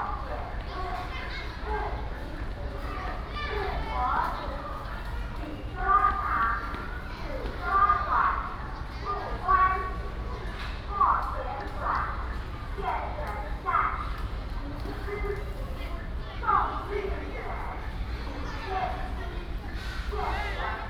Puli Elementary School, Nantou County - In Elementary School
In Elementary School, Cleaning time ㄝ